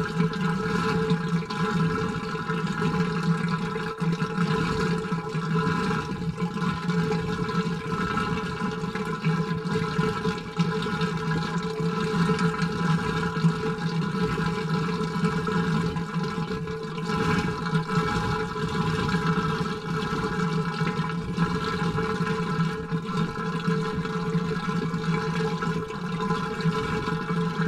Saint Nicolai Park, Angers, France - (588 ORTF) Water pool machine
Recording of water pool machine in Saint Nicolai Park.
Recorded with Sony PCM D100